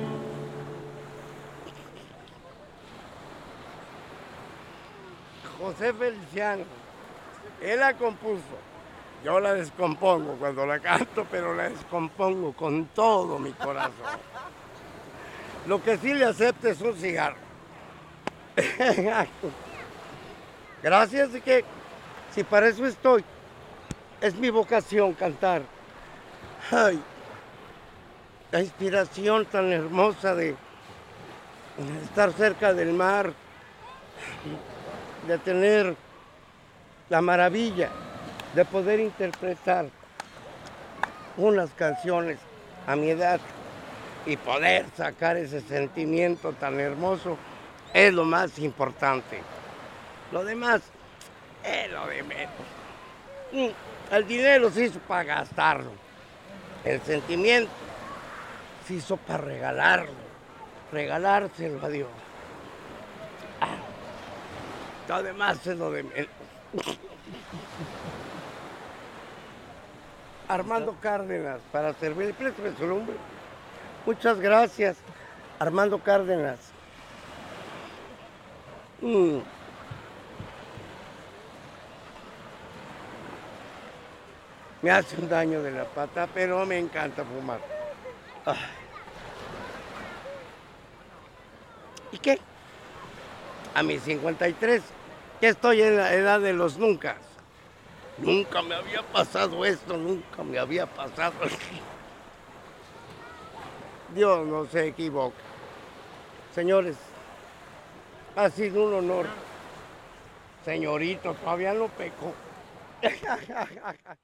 C., Boulevard Turístico Bording, Progreso, Yuc., Mexique - Progresso - Armando
Progresso - Mexique
Sur la plage, quelques minutes avec Armando
Yucatán, México, 23 October 2021